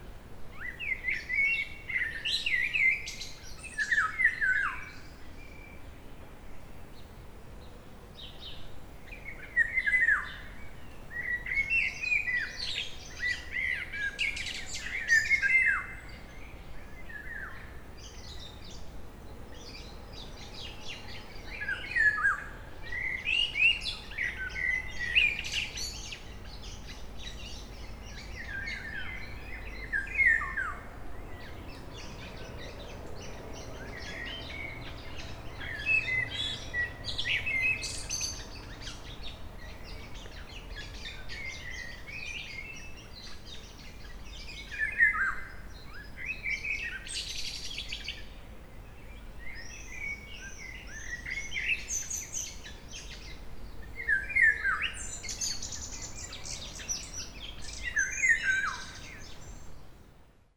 Diraki, Srdoci, blacbirds
Blackbirds, summer time.
recording setup: M/S(Sony stereo condenser via Sony MD @ 44100KHz 16Bit